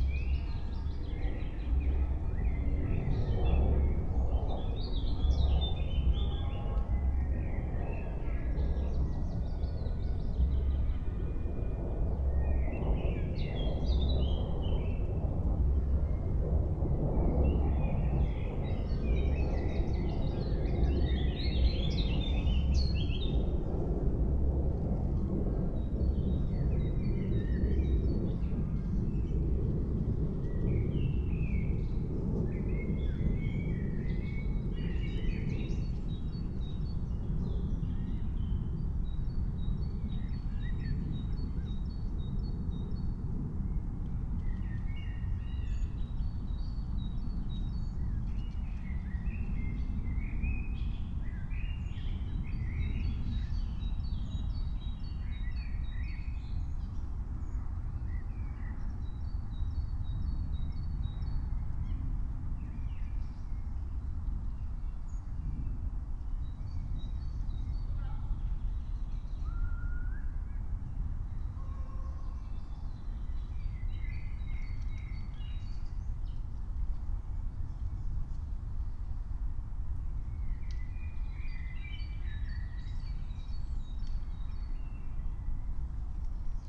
19:16 Berlin, Königsheide, Teich - pond ambience